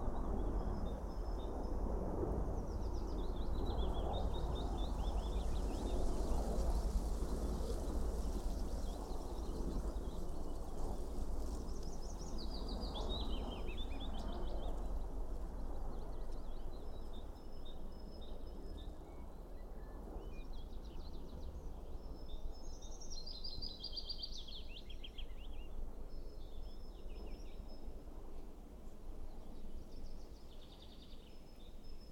Nationale Park Hoge Veluwe, Netherlands - Deelensewas Helicopter
Soundfield microphone (stereo decode) Birds, Military Helicopter.